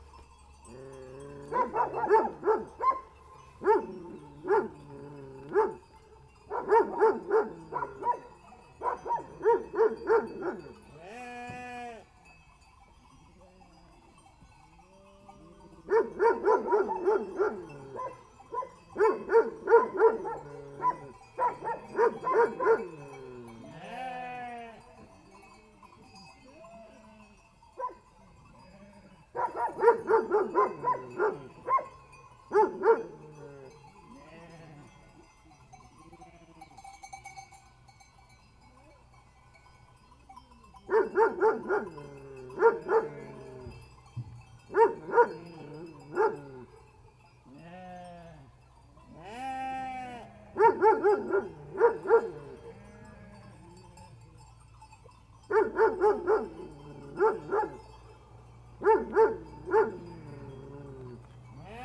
Epar.Od. Chanion - Sougias, Kandanos Selinos 730 09, Greece - sheep bells and dogs
just before evening, the dogs barks mix with the sheep's bells and baa's in this rural beautiful mountain side. (44,100 16 bit Roland R-05 stereo rec)